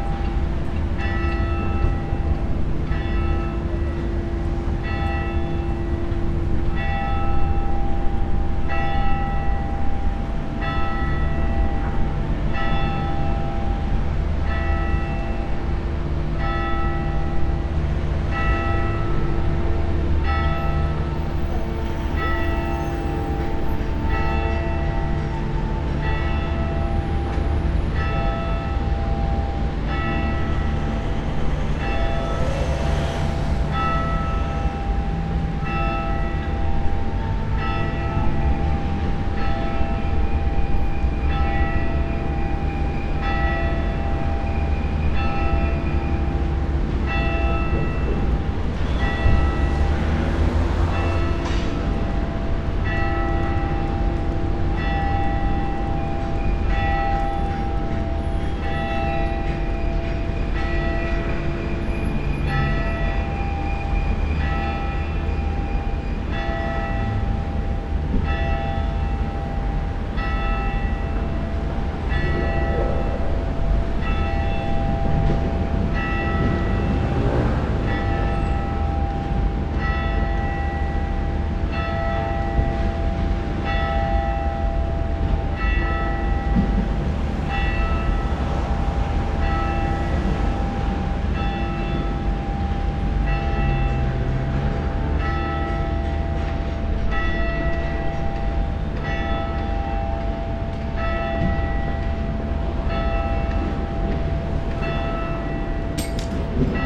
Brussels, Place Brugmann - ND de lAnnonciation, funeral bells.
SD-702, Rode NT4
Ixelles, Belgium, 1 June 2011